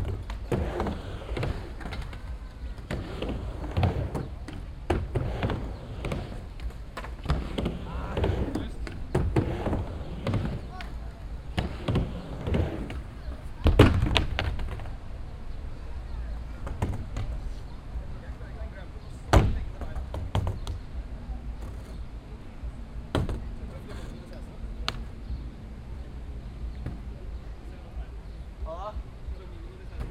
Oslo, Spikersuppa, skatepark
Norway, Oslo, skatebord, skatepark, binaural